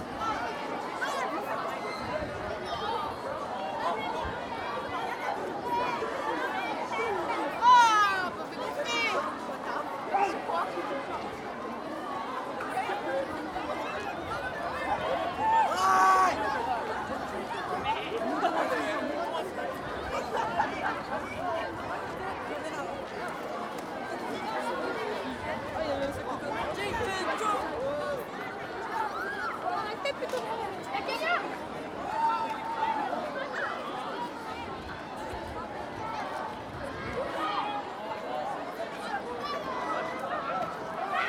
Cour, collège de Saint-Estève, Pyrénées-Orientales, France - Récréation, ambiance 2
Preneur de son : Etienne
March 2011